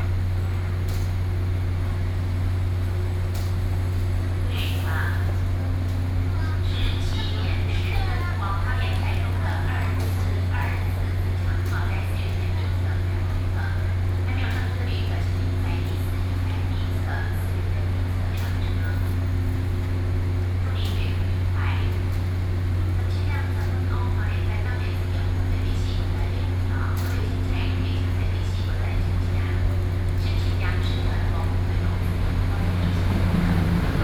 Taipei Main Station - Railway platforms